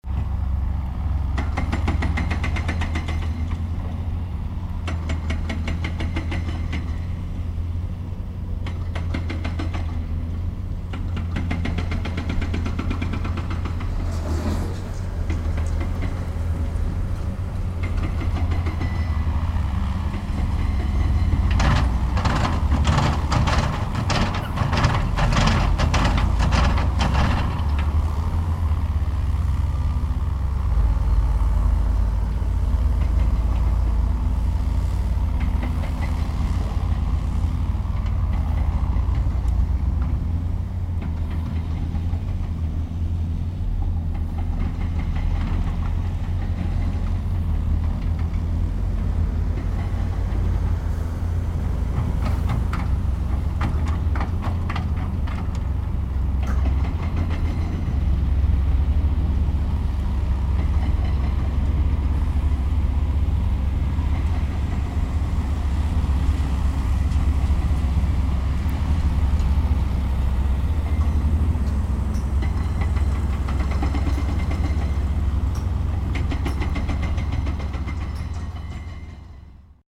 {
  "title": "here's a huge building site now... - here is a huge building site now...",
  "description": "idyllic place - not any more. a big new appartment site is built at the moment. recorded sep 1st, 2008.",
  "latitude": "47.43",
  "longitude": "9.40",
  "altitude": "701",
  "timezone": "GMT+1"
}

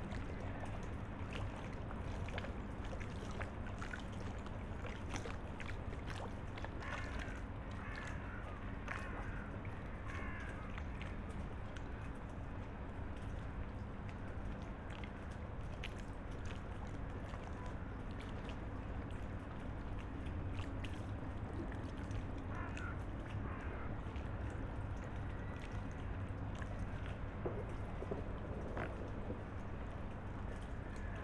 {
  "title": "Berlin, Plänterwald, Spree - early winter afternoon",
  "date": "2018-12-28 15:05:00",
  "description": "place revisited on an early winter afternoon.\n(SD702, AT BP4025)",
  "latitude": "52.49",
  "longitude": "13.49",
  "altitude": "23",
  "timezone": "Europe/Berlin"
}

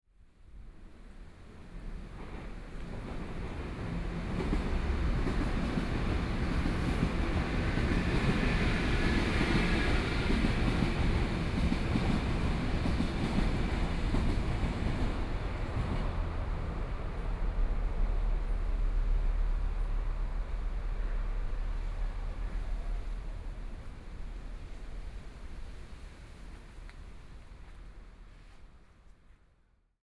{
  "title": "Jungli City, Taoyuan County - Train traveling through",
  "date": "2013-09-16 14:05:00",
  "description": "Train traveling through, Sony Pcm D50+ Soundman OKM II",
  "latitude": "24.96",
  "longitude": "121.23",
  "altitude": "136",
  "timezone": "Asia/Taipei"
}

